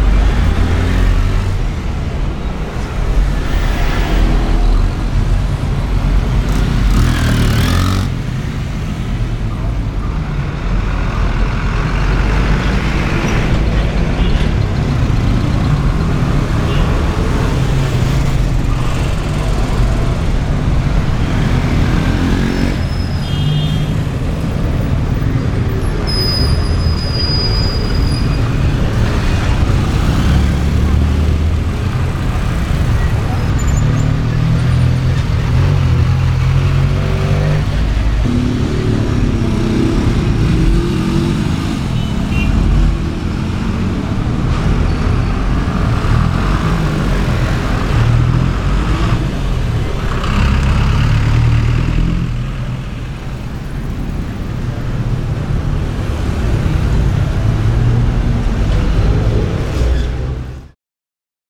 Cra., Bogotá, Colombia - Venececia, Av. 68
It is a main avenue in a popular and commercial neighborhood in the south of Bogota. The engines of the cars and buses that pass through this area at 6pm as the main corridor. The whistles of cars and motorcycles are heard from time to time in the foreground along with people riding their bicycles at a considerable speed. People pass by talking loudly in colloquial language.
Región Andina, Colombia, 21 May